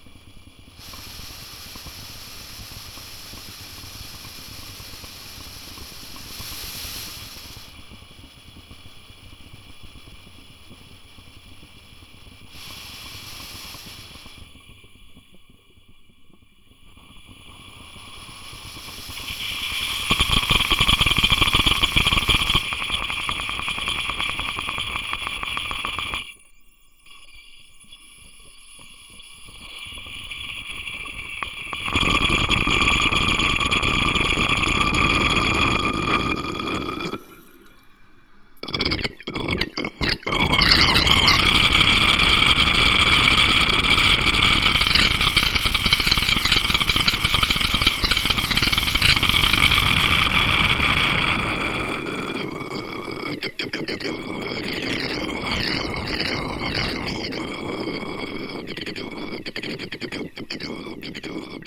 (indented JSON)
{
  "title": "poznan, windy hill district, bathroom - hissing faucet",
  "date": "2012-04-27 10:17:00",
  "description": "the inflow of hot water was shut down today. the faucet was making really strange hissing and gargling sounds. i moved the handle a little bit to get different sounds and dynamics",
  "latitude": "52.44",
  "longitude": "16.94",
  "altitude": "92",
  "timezone": "Europe/Warsaw"
}